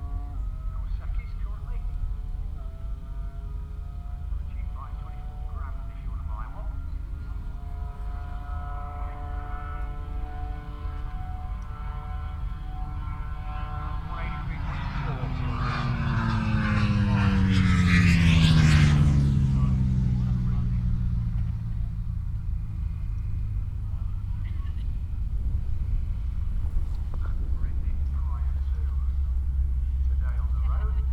Glenshire, York, UK - Motorcycle Wheelie World Championship 2018...
Motorcycle Wheelie World Championship 2018 ... Elvington ... Standing Start 1 Mile ... open lavalier mics clipped to sandwich box ... positioned just back of the timing line finish ... blustery conditions ... all sorts of background noise ...